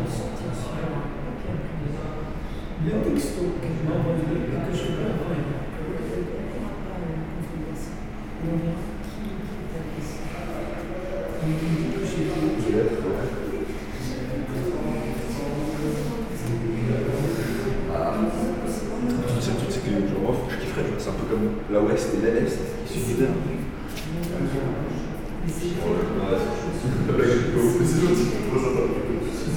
Montereau-Fault-Yonne, France - Montereau station
People discussing with their phone in the Montereau station. Bla-bla-blaaa blablabla...